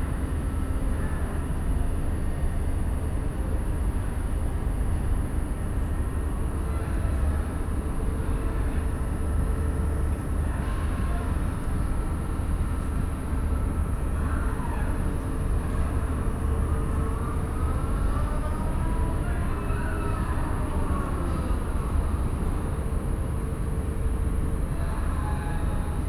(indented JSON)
{
  "title": "Berlin, Plänterwald, Spree - cement factory at night",
  "date": "2015-07-18 23:10:00",
  "description": "place revisited on World Listening Day, industrial sounds travelling across the river. The cement factory is busy all night and day.\n(Sony PCM D50, DPA4060)",
  "latitude": "52.49",
  "longitude": "13.49",
  "altitude": "23",
  "timezone": "Europe/Berlin"
}